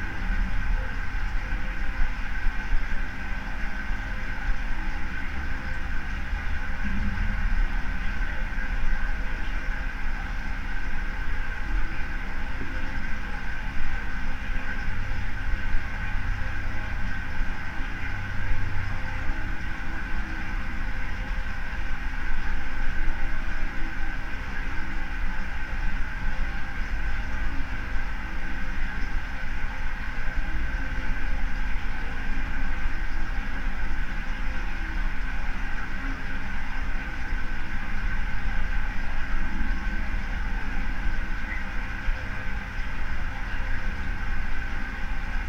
{
  "title": "Utena, Lithuania, aspects of stream",
  "date": "2018-09-06 20:10:00",
  "description": "stream under the road. two records in one. first part: soundscape, the second: contact mics on metallic support",
  "latitude": "55.52",
  "longitude": "25.58",
  "altitude": "96",
  "timezone": "Europe/Vilnius"
}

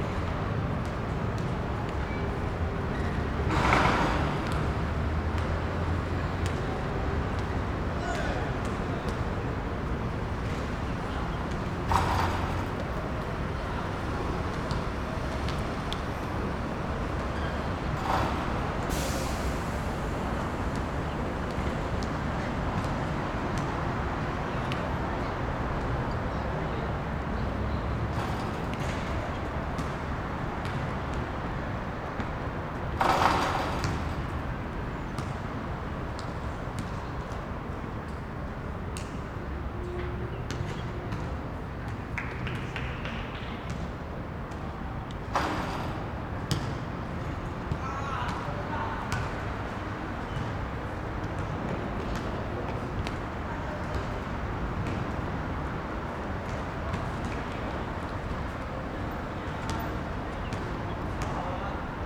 {
  "title": "Sanzhong District, New Taipei City - Play basketball",
  "date": "2012-02-12 16:23:00",
  "description": "Play basketball, Beneath the viaduct, Rode NT4+Zoom H4n",
  "latitude": "25.06",
  "longitude": "121.47",
  "altitude": "1",
  "timezone": "Asia/Taipei"
}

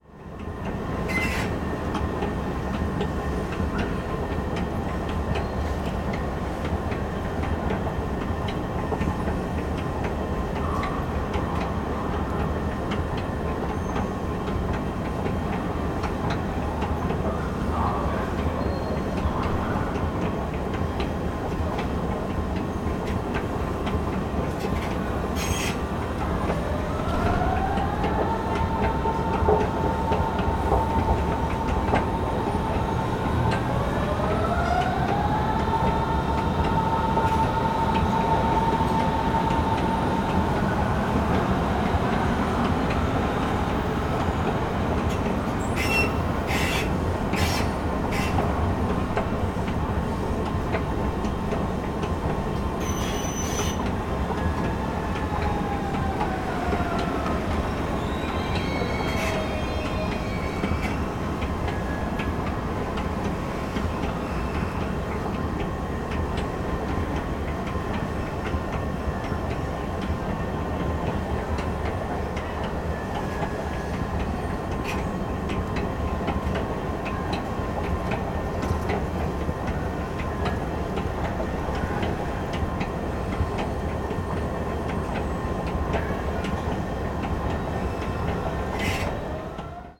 {
  "title": "berlin südkreuz, elevators and escalators - escalator 1st floor",
  "date": "2011-02-20 16:53:00",
  "description": "all escalators here seem to need service",
  "latitude": "52.48",
  "longitude": "13.37",
  "altitude": "42",
  "timezone": "Europe/Berlin"
}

